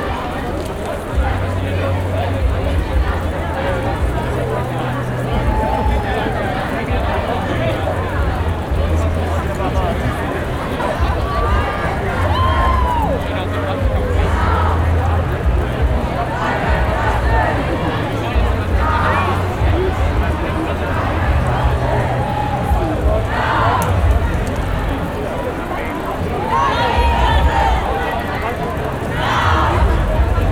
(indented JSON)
{
  "title": "Dorotheenstraße, Berlin, Deutschland - climate justice",
  "date": "2021-09-24 13:57:00",
  "description": "24th of september climate march",
  "latitude": "52.52",
  "longitude": "13.38",
  "altitude": "47",
  "timezone": "Europe/Berlin"
}